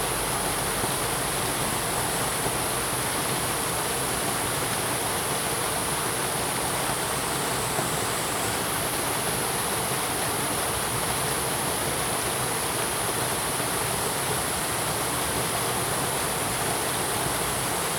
茅埔坑溪, 南投縣埔里鎮桃米里 - sound of water streams
sound of water streams, In Wetland Park
Zoom H2n MS+XY